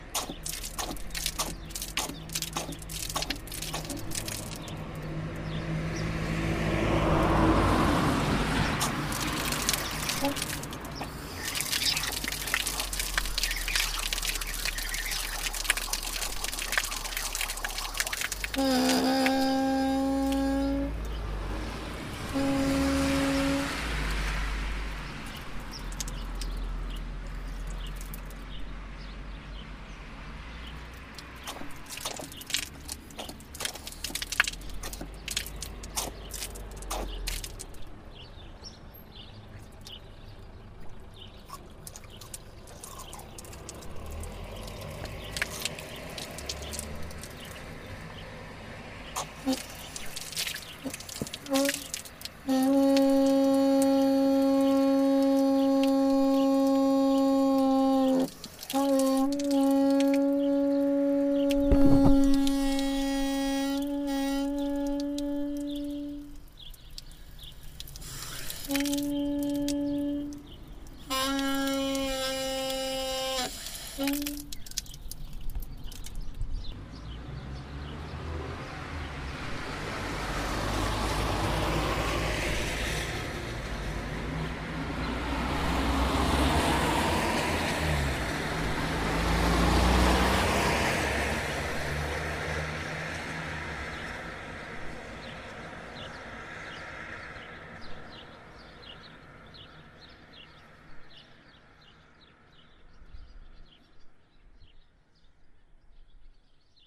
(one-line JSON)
{"title": "Chef Lieu, Aillon-le-Jeune, France - Robinet", "date": "2015-07-07 18:00:00", "description": "Jour de canicule à Aillon-le-jeune le goudron de la route est fondu. Bruits de robinet du bassin public.", "latitude": "45.62", "longitude": "6.08", "altitude": "898", "timezone": "Europe/Paris"}